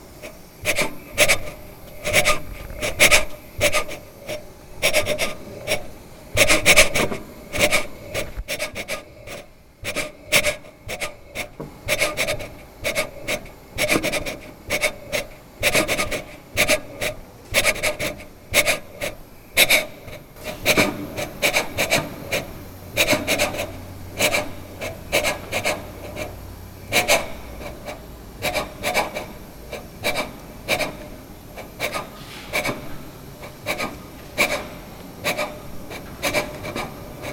Parazit sounds from steam tubes, Bubeneč
This sound Ive recorded in a machine-hall, further from the steam engine. There was a steam escaping from tubes...